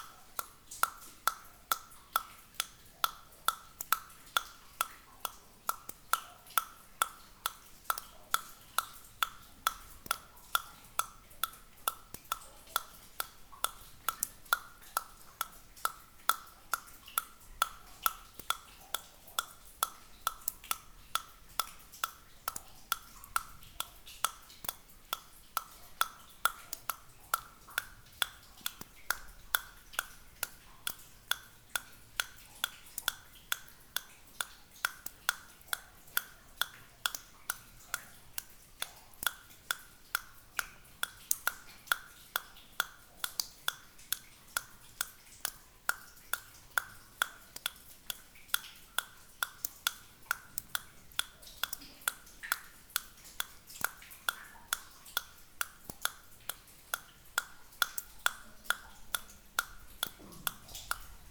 Rumelange, Luxembourg - Hutberg mine techno
Techno music in a underground abandoned mine.
23 May 2015